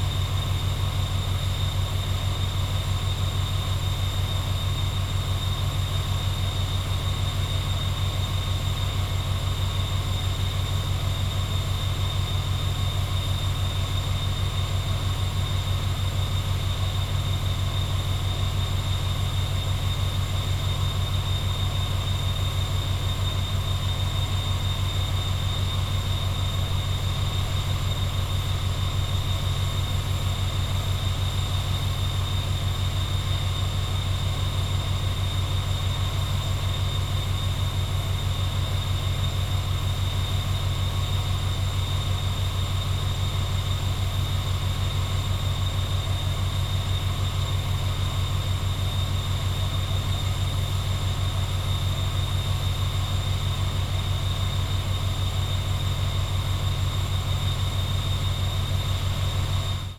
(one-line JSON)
{"title": "Powerplant at Monolithos, Santorini, Grecja - (56) XY Powerplant buzz", "date": "2016-11-22 14:36:00", "description": "XY stereo recording - noisy powerplant on the way to the beachside.\nZoomH2n", "latitude": "36.41", "longitude": "25.48", "altitude": "8", "timezone": "Europe/Athens"}